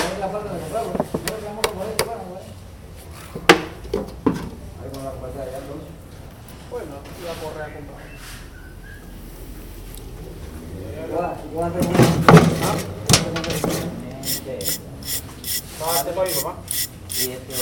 Un joyero del taller de la joyería Ginna trabaja los últimos retoque de una pulsera de plata.